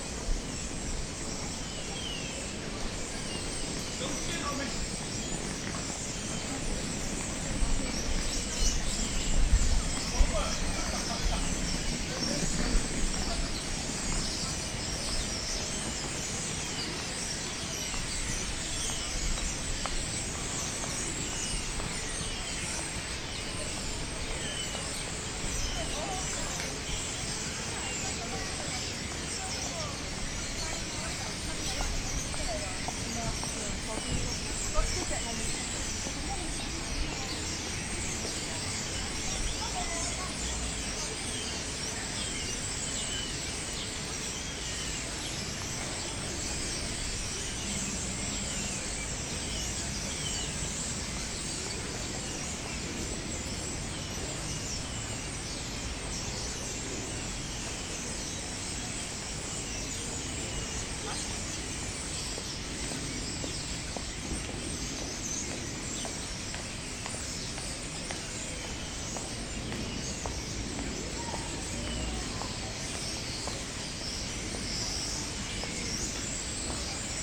migratory birds @ Frankfurt Hauptwache
Hundreds (thousands???) of migratory birds assembling in the sycamore trees before they take off to their winter residence. Recorded at Hauptwache, maybe one of the ugliest, highly frequented places downtown Frankfurt. You
e also going to hear some funny pedestrians comments...